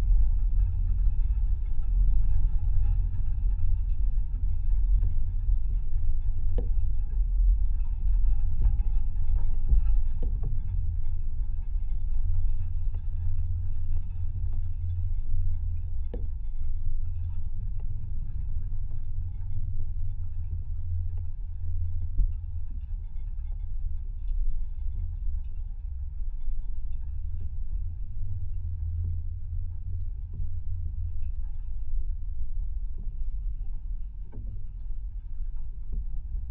Pačkėnai, Lithuania, barbed wire
a piece of rusty barbed wire, probably in soviet times here was a pasture. contact microphones recording. low frequencies - listen with good speakers or headphones.
Utenos rajono savivaldybė, Utenos apskritis, Lietuva, 18 February 2020